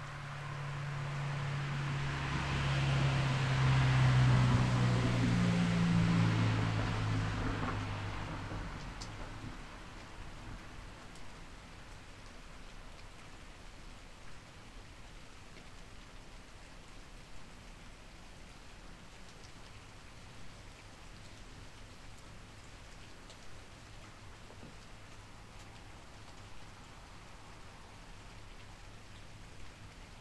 mainz-gonsenheim, am haag: terrasse - the city, the country & me: covered porch
under a covered porch while it rains
the city, the country & me: october 16, 2010